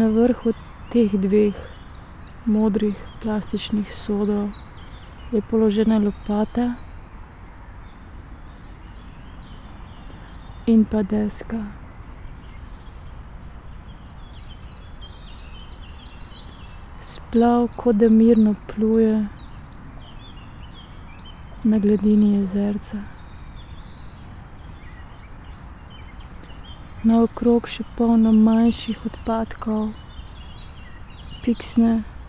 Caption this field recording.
spoken words, sunday spring afternoon